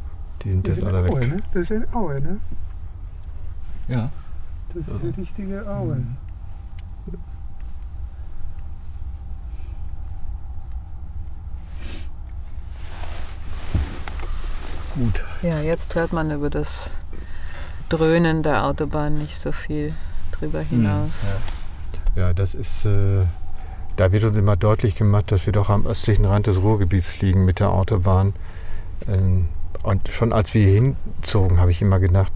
Weetfeld, Hamm, Germany - Ersatz-Aue entlang der Autobahn...
Looking at the Wetlands along the Motorway…
“Citizen Association Against the Destruction of the Weetfeld Environment”
(Bürgergemeinschaft gegen die Zerstörung der Weetfelder Landschaft)